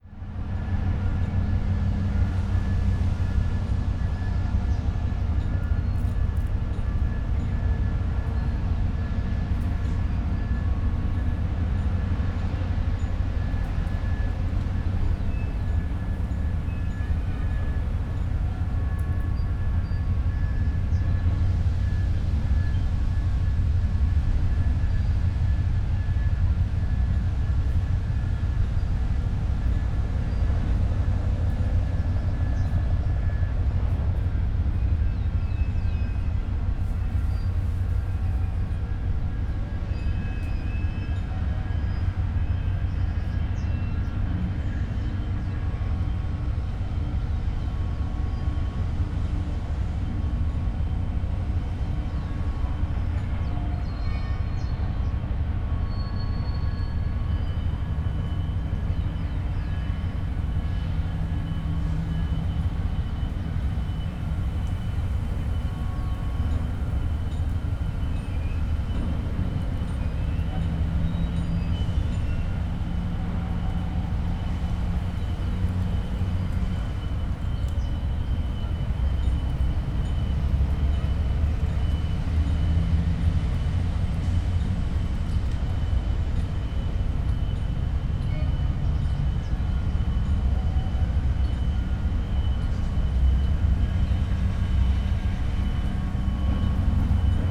Paseo 21 de Mayo, Valparaíso, Chile - harbour ambience from above
Valparaiso, near elevator at Artilleria, harbor ambience heard from above
(Sony PCM D50, DPA4060)